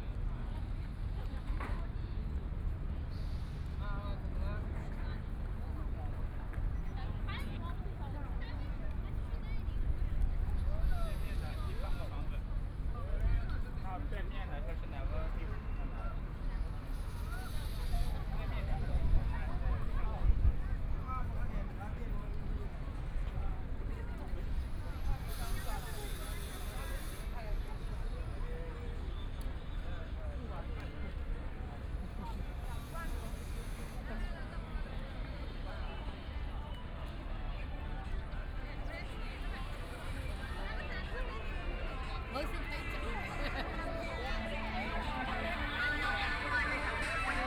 Wai Tan, Huangpu District - walk
Many tourists coming and going, The Bund (Wai Tan), Ship in the river, Binaural recording, Zoom H6+ Soundman OKM II
Huangpu, Shanghai, China, 25 November 2013